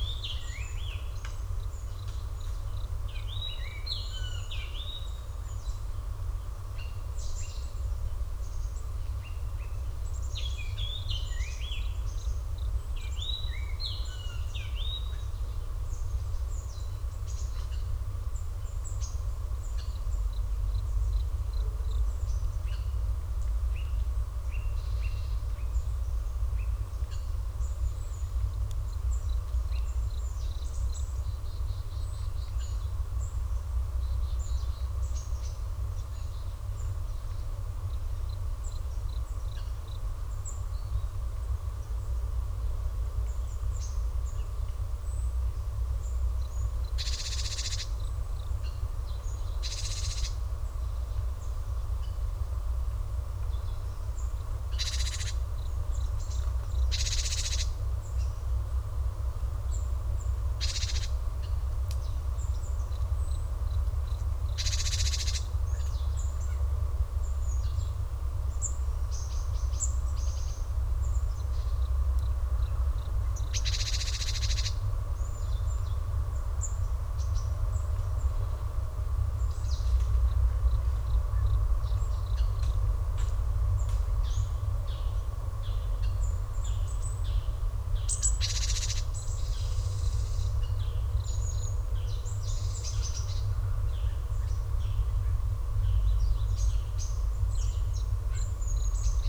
Abandoned woodpeckers house on Jung-do 딱따구리의 빈집 (中島)
...a cavity in a tree in a remnant wood, well formed entrance and deep recess...possibly a woodpecker's nest, now abandoned...low enough to the ground to be accessible...just after dawn on Jung-do and already the sounds of nearby construction work become audible...story of rapid urban expansion...
강원, 대한민국, 7 October 2019